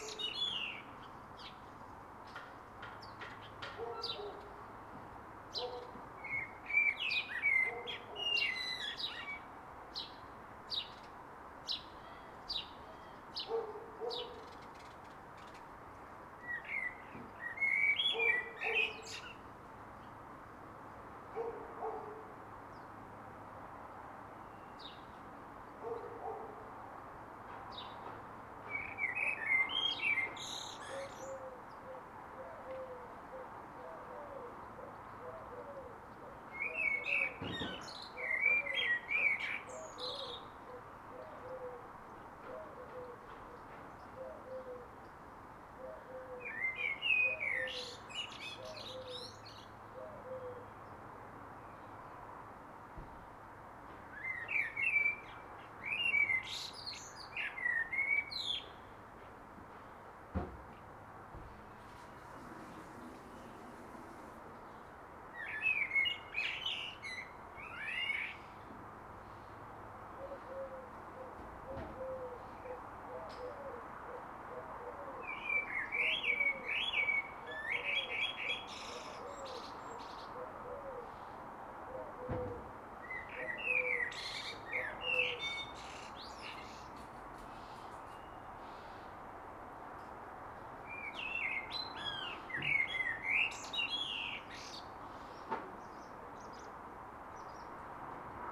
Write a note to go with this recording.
Dogs barking, birds singing, lawnmowers, DIY and the nearby busy Galway-Headford road as heard from the back garden of a house I had been living in for three months and have since moved out of, in the quiet neighbourhood of Riverside. This estate was built on top of an old city dump, some of the houses (including mine) had suffered some major infrastructural damage due to land sinkage. Recorded with a Zoom H1 on the windowsill of my ground-floor window.